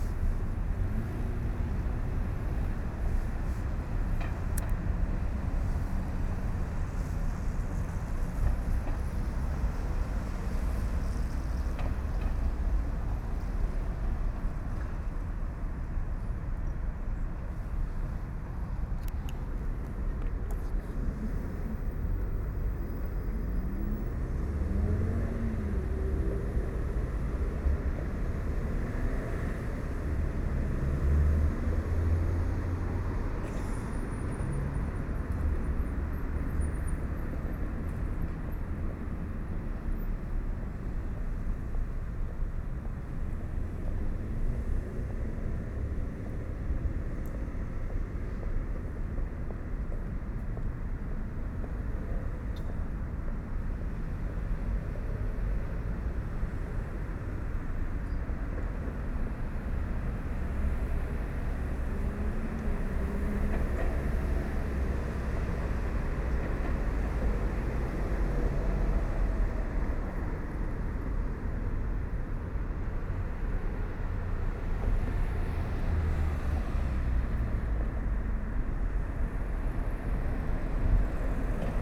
equipment used: Edirol R-09HR
after a bike ride